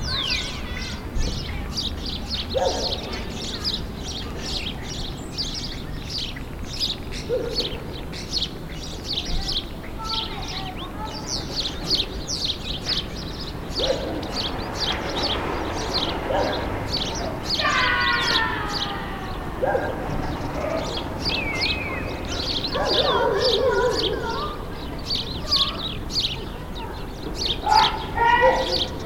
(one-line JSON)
{"title": "Imsouane, Maroc - Birds in Imsouane", "date": "2020-12-27 12:06:00", "description": "Birds in Imsouane, Zoom H6", "latitude": "30.85", "longitude": "-9.82", "altitude": "32", "timezone": "Africa/Casablanca"}